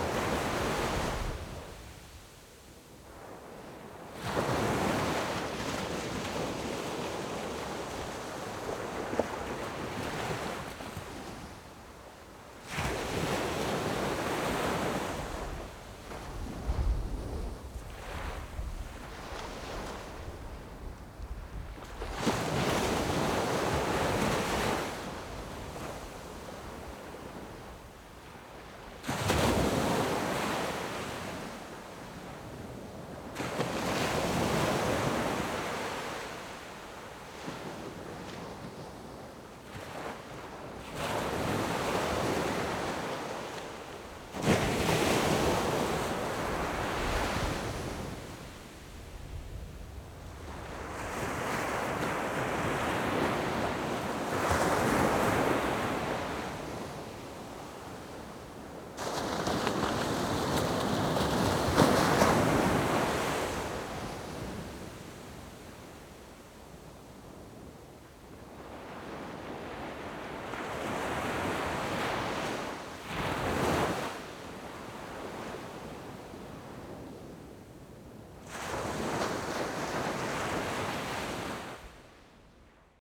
嵵裡沙灘, Magong City - Sound of the waves
At the beach, Windy, Sound of the waves
Zoom H6+Rode NT4